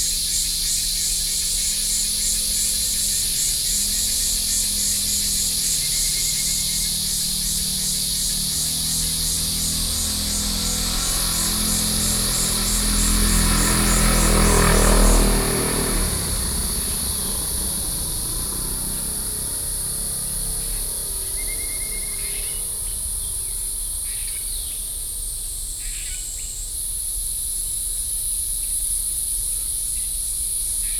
Gōngyuán Road, Jinshan District, New Taipei City - Cicadas
Jinshan District, New Taipei City, Taiwan